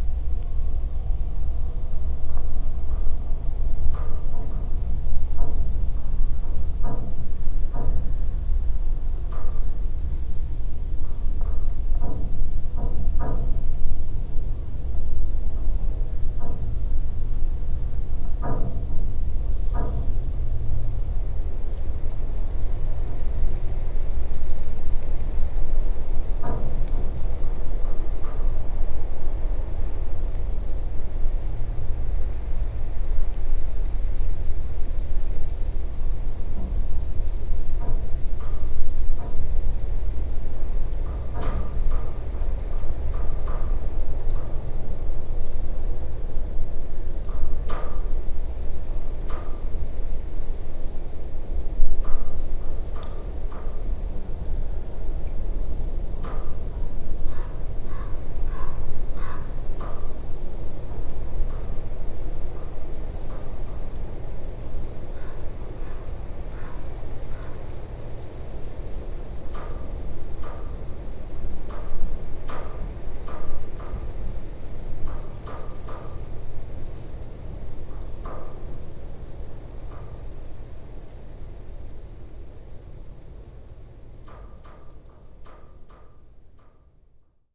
Collapsed metallic watertower still laying on the ground. The locals told me the watertower collapsed from the strong wind. Small omni mics and geophone were used in this recording.